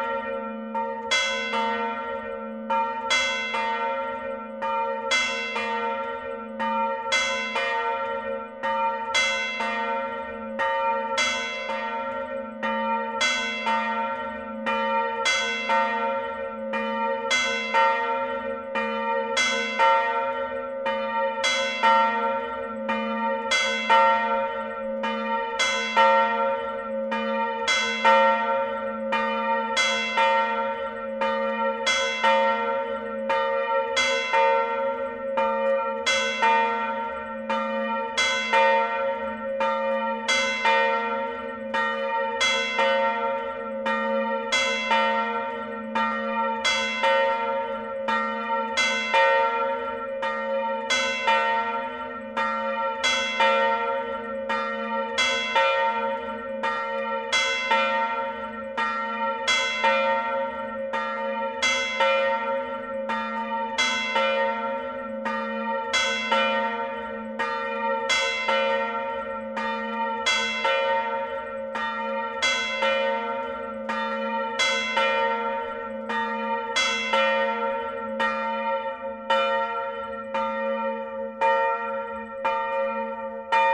Rue du Maréchal Foch, Brillon, France - Brillon (Nord) - église St-Armand
Brillon (Nord)
église St-Armand
Volée cloche grave + tintement cloche aigüe